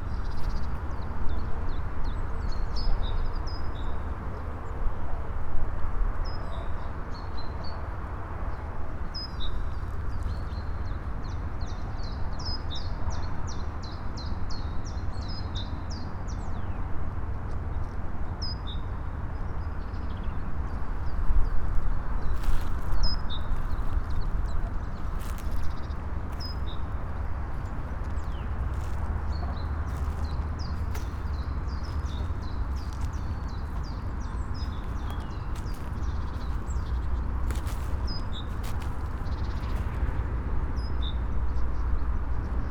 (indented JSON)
{
  "title": "drava areas, melje - intimate squeakers",
  "date": "2015-03-29 13:10:00",
  "latitude": "46.55",
  "longitude": "15.69",
  "timezone": "Europe/Ljubljana"
}